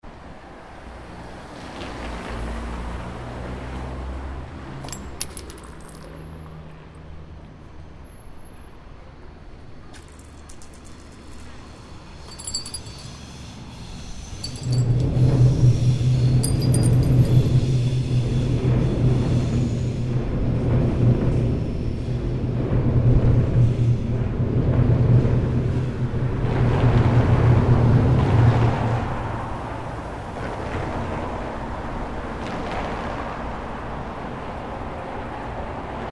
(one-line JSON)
{"description": "broken glass in railway tunnel", "latitude": "53.56", "longitude": "9.96", "altitude": "14", "timezone": "Europe/Berlin"}